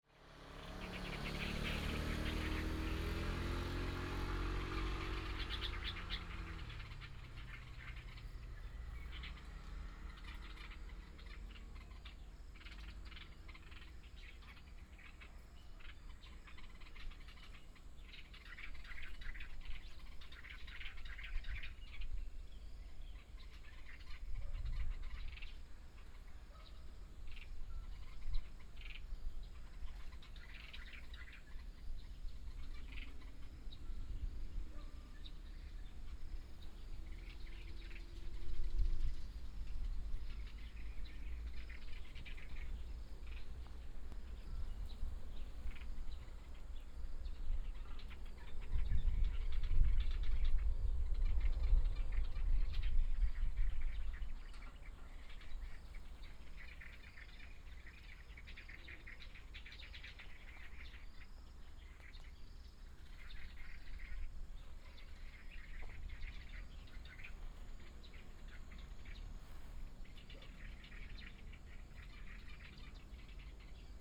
大埤池產業道路, Dawu Township - Bird and Dog
Mountain Settlement, Bird call, Traffic sound, Dog barking
Binaural recordings, Sony PCM D100+ Soundman OKM II